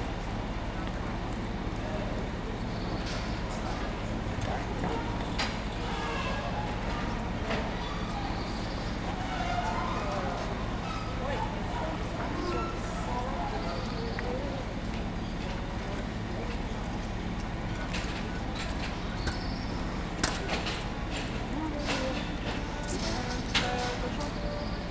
Rue de la République, Saint-Denis, France - Outside McDonalds, R. De la Republique
This recording is one of a series of recording, mapping the changing soundscape around St Denis (Recorded with the on-board microphones of a Tascam DR-40).
25 May 2019, 10:15